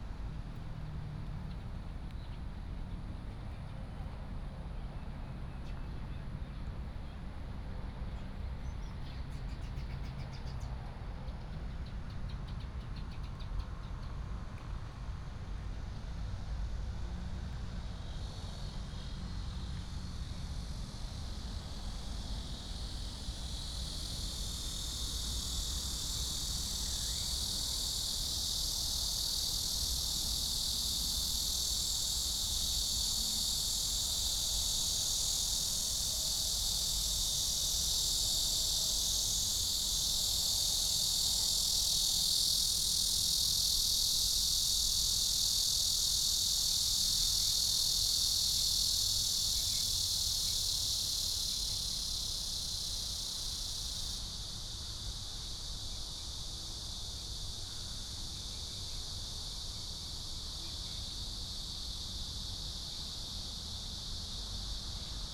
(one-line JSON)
{"title": "1-4號生態埤塘, Taoyuan City - eco-park", "date": "2017-07-05 16:42:00", "description": "eco-park, Cicadas, Birds, Traffic sound", "latitude": "24.98", "longitude": "121.29", "altitude": "108", "timezone": "Asia/Taipei"}